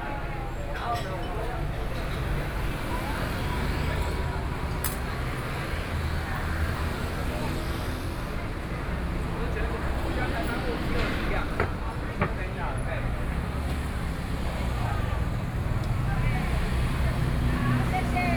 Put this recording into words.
Walking through the traditional market